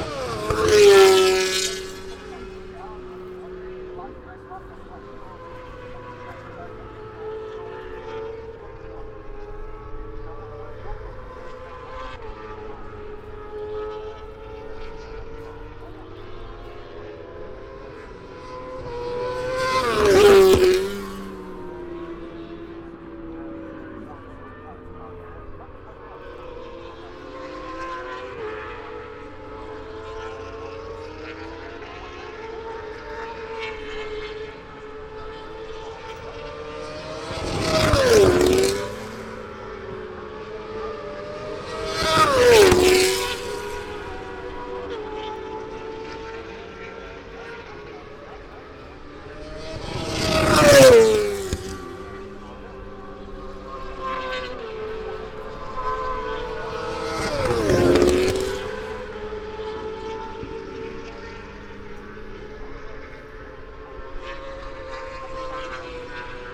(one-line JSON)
{"title": "Silverstone Circuit, Towcester, UK - British Motorcycle Grand Prix 2018 ... moto two ...", "date": "2018-08-25 10:55:00", "description": "British Motorcycle Grand Prix 2018 ... moto two ... free practic three ... maggotts ... lvalier mics clipped to sandwich box ...", "latitude": "52.07", "longitude": "-1.01", "altitude": "156", "timezone": "Europe/London"}